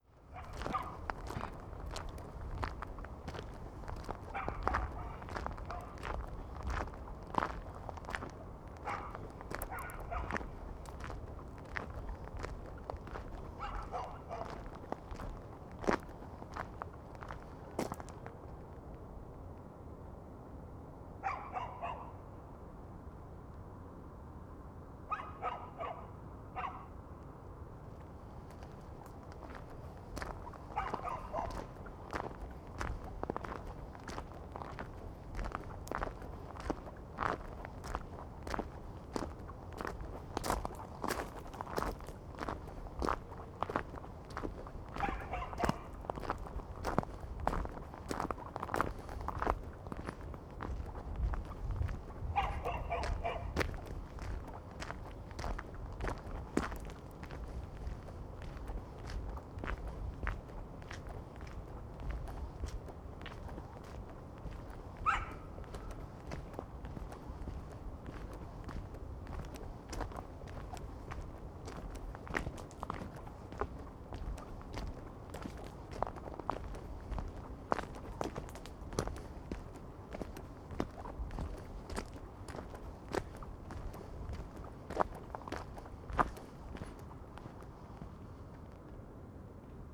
Mariánské Radčice, Tschechien - night walk
on my way back to Mariánské Radčice, night ambience with dogs (Sony PCM D50, Primo EM172)
Mariánské Radčice, Czechia